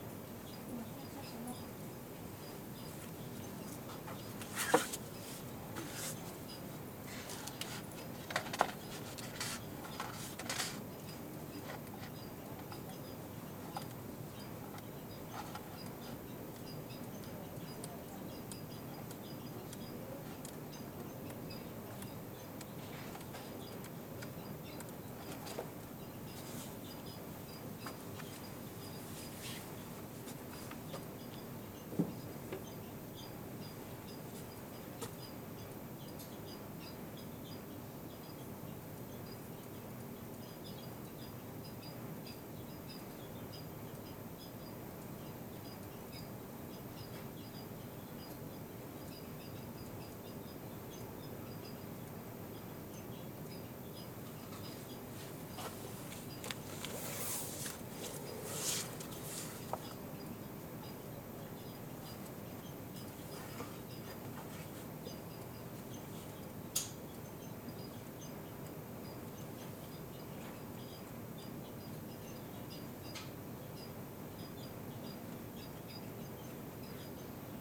Martin Buber St, Jerusalem - Library At Bezalel Academy of Arts and Design
Library At Bezalel Academy of Arts and Design.
25 March, ~11:00